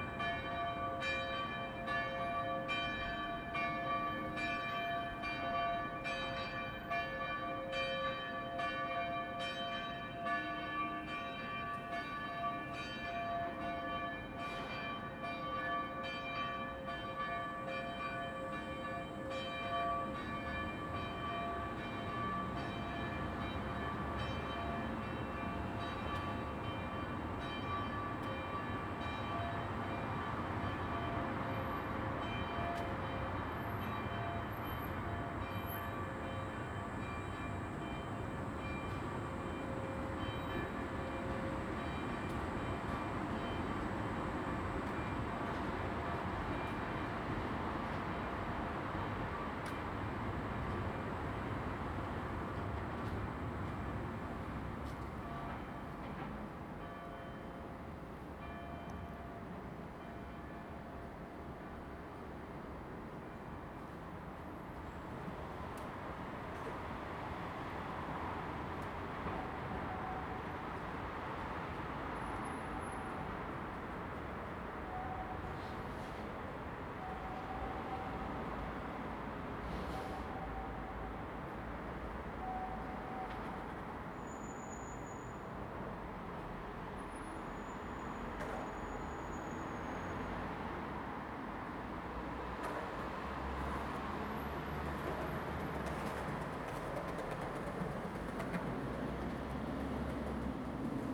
Národní, Praha-Nové Město, Czechia - Noon bells from the Saint Voršila monastery on the Václav Havel square
The bell from the turret of the nearby monastery of Saint Voršila sounded today unusually clear, accompanied by a steady rumbling of a lonesome skater and sometimes intervened by deep humming of almost empty trams.